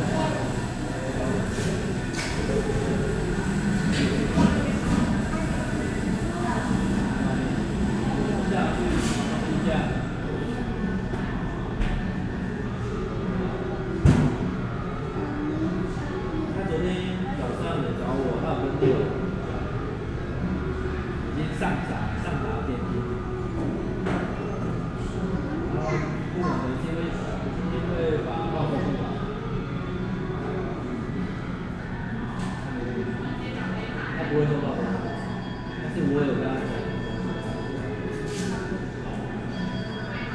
No. 687號, Xida Road, North District, Hsinchu City, Taiwan - Starbucks
Workers use blenders and other equipment behind the counter. Western music plays over the shop's speakers. Patrons talk on the phone and type on a laptop. Starbucks, North District, Xida Rd. Stereo mics (Audiotalaia-Primo ECM 172), recorded via Olympus LS-10.